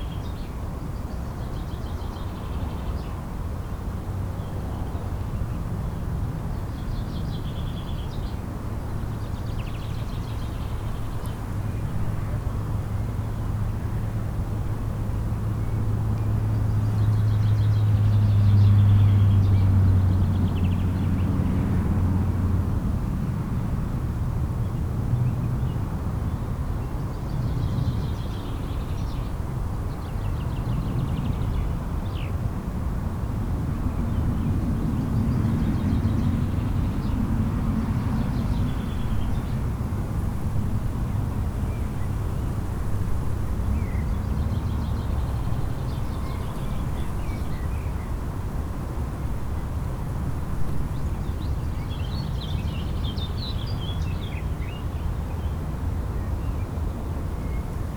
hünger, feldweg: weide - the city, the country & me: pasture, birds
birds, long grass rustling in the wind
the city, the country & me: may 7, 2011